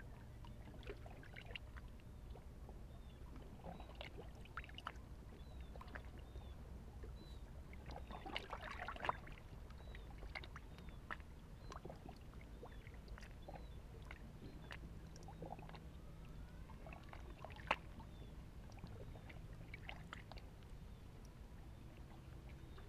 Asker, Norway, on a shore pebbles